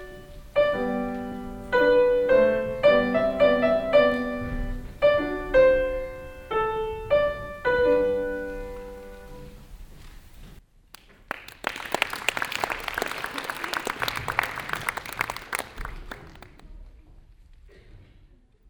refrath, waldorfschule, aula, vorspiel - refrath, waldorfschule, aula, vorspiel 04
alljährliches klavier vorspiel der Klavierschüler in der schulaula.hier: die weihnachtslieder auswahl
soundmap nrw - weihnachts special - der ganz normale wahnsinn
social ambiences/ listen to the people - in & outdoor nearfield recordings